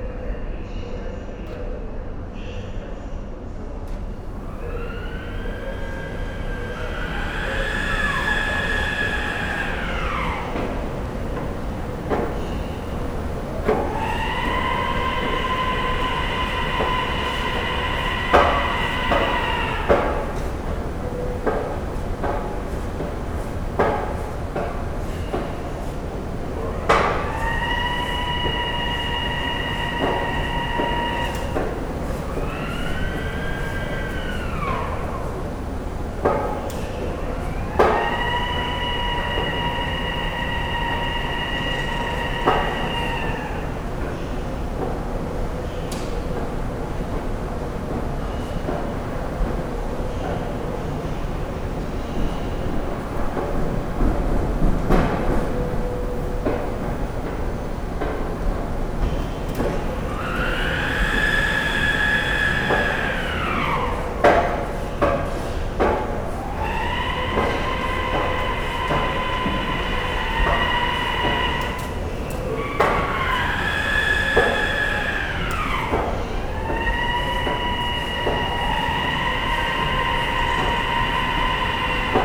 Western train station, Zachodnia, Poznan - sliding doors
underpass at the western train station in Poznan. the stairs to the station are separated by heavy, glass sliding doors which make lamenting sound when opening and closing. the knocking sound towards the end is an escalator. it's early in the morning, a few people passing by. train announcements diffused in the long corridor. (roland r-07)